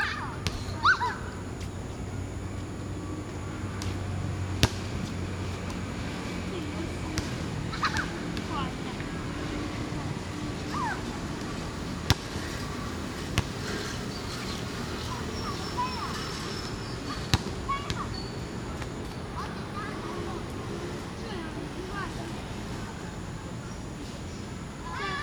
安邦公園, Zhonghe Dist., New Taipei City - in the Park
in the Park, Sony ECM-MS907, Sony Hi-MD MZ-RH1
New Taipei City, Taiwan, 29 June 2011, 22:11